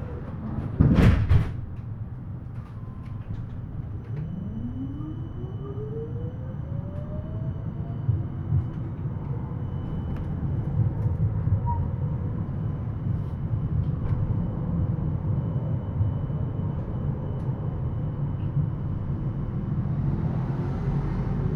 Bern, Schweiz - Bern, Linie 8, Steigerhubel bis Betlehem Säge
Tram ride. Recorded with an Olympus LS 12 Recorder using the built-in microphones. Recorder hand held.
Bern/Berne, Schweiz/Suisse/Svizzera/Svizra, September 2021